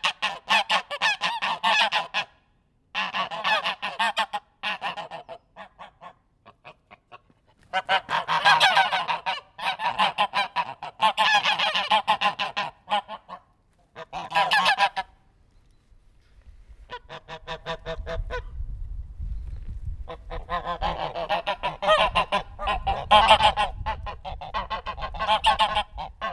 Langel, Köln, Deutschland - Gänse / Goose
Laute Gänse.
Loud Goose.
Cologne, Germany, 3 March, 15:30